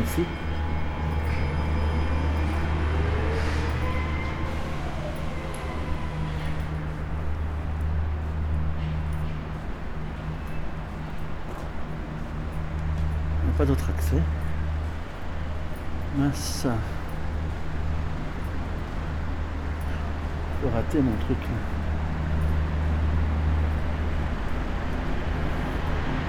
Gare De Vaise, Lyon, France - Gare aux oreilles !
PAS - Parcours Audoi Sensible, écouter la gare, dedans/dehors
Soundwalk, listening to Station, indoor, outdoor.